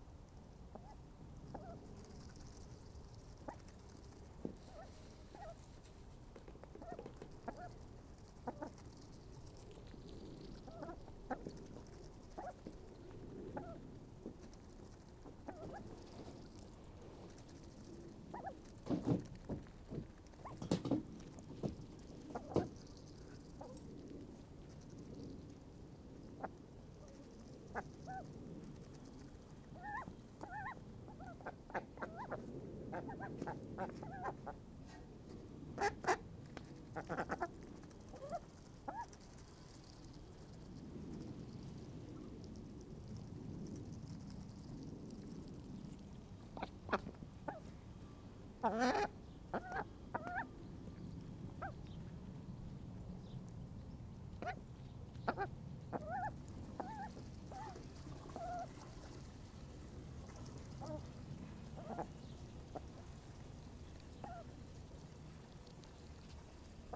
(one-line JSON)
{"title": "The Ducks, Reading, UK - Honey and Pretzel and me doing the chores", "date": "2016-06-22 17:15:00", "description": "This is the sound of my two remaining naughty ducks, Honey and Pretzel. Sadly Bonbon is no longer with us. But as you can hear, the other two make up for it with extra quacking. Every day I give them clean water (which they destroy instantly) and some food pellets (which they sometimes eat, but sometimes they forget because they are too busy eating insects instead). I also periodically empty out their paddling pool, scrub all the poo and algae off it, and refresh it with clean water (which they destroy instantly... do you sense a theme?) They quack almost constantly and I love the sound. They have a very noisy, alarmed sort of sound which they direct at us and which you can hear here, but then also they have this little chuntering duck banter which they seem to do just between themselves. They are very rarely silent, even when they are just resting in the long grass they are muttering to each other in duck.", "latitude": "51.44", "longitude": "-0.97", "altitude": "55", "timezone": "Europe/London"}